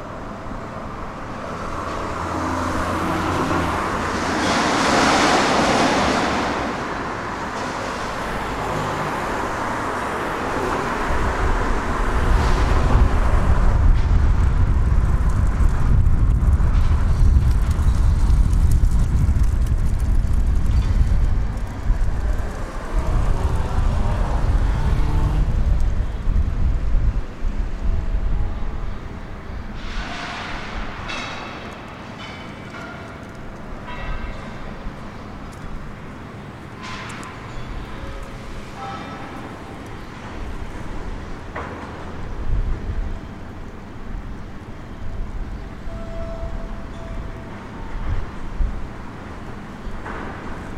duisburg-bruckhausen - soundscape thyssen kaiser-wilhelm-strasse